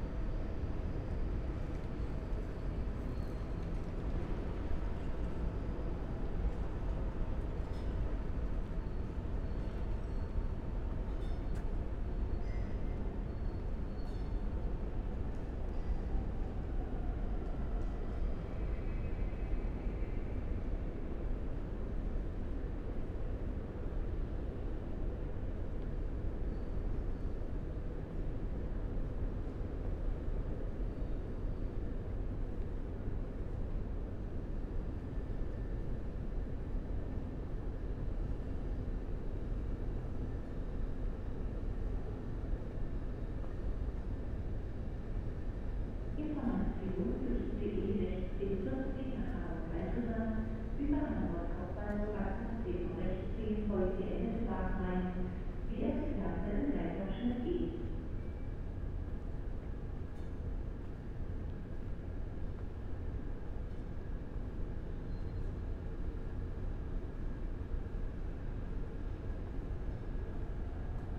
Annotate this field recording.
binaural soundwalk through the main station, the city, the country & me: may 12, 2014